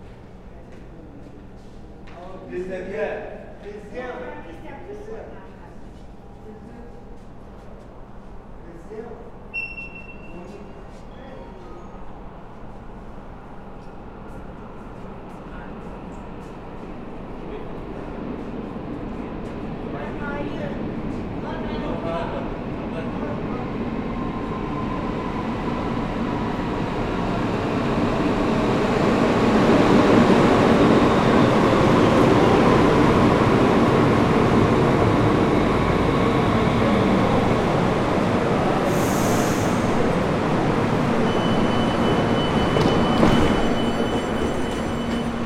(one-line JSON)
{"title": "Frankfurt, S-Bahn, airport to main station - Without anouncements", "date": "2020-04-24 18:39:00", "description": "On the ride back to the main station there are no anouncements of the stations made. I never experienced that. Perhaps there were not enough people entering and leaving the S-Bahn. Only the main station is anounced. Leaving to the main station, walking through the large hall that leads to the tracks, walking to the escelator...", "latitude": "50.05", "longitude": "8.57", "altitude": "118", "timezone": "Europe/Berlin"}